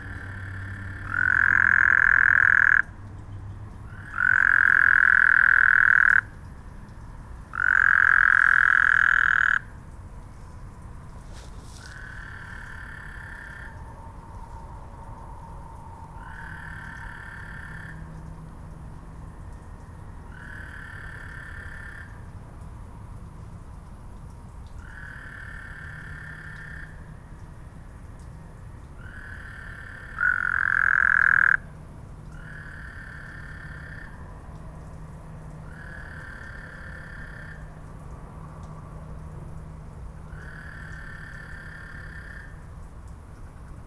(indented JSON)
{
  "title": "Urban toads chat, Heights, Houston, Texas",
  "date": "2012-07-04 03:05:00",
  "description": "Two toads chatting about a block away from each other. Train, storm drain, insects, roaches, cars, urban night sounds.\nChurch Audio CA-14 omnis with binaural headset > Tascam DR100 MK-2",
  "latitude": "29.80",
  "longitude": "-95.38",
  "altitude": "23",
  "timezone": "America/Chicago"
}